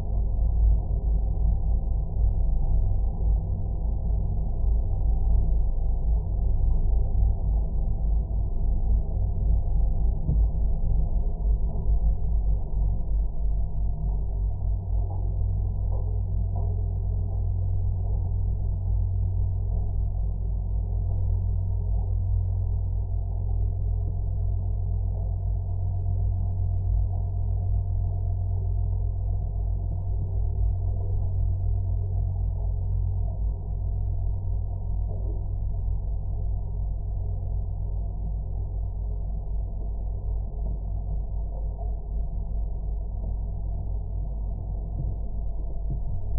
M/S Jaarli, Turku, Finland - A moving boat through a horizontal metal bar
M/S Jaarli sailing on the river Aura in Turku. Recorded with LOM Geofón attached with a magnet to a thin horizontal metal bar near the bow of the boat. Zoom H5.